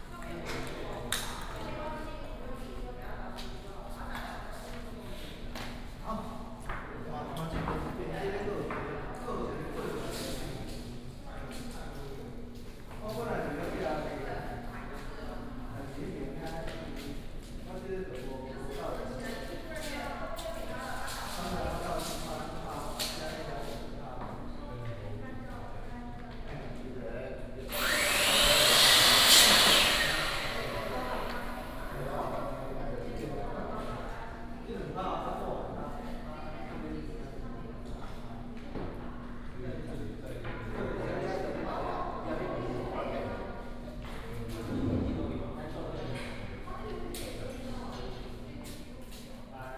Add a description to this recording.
In the gallery, Electrician under construction